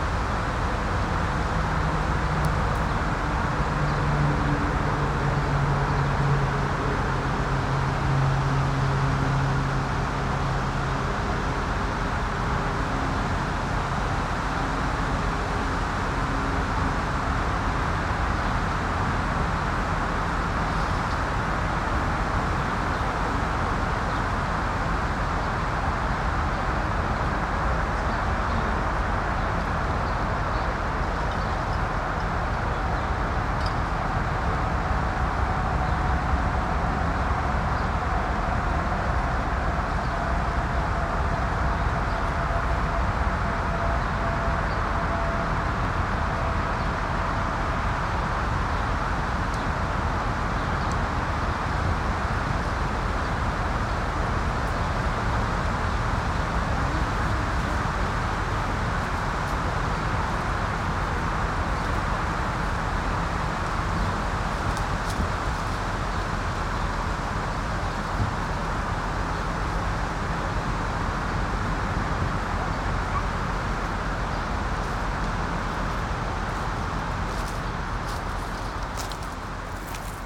Tech Note : Sony PCM-M10 internal microphones.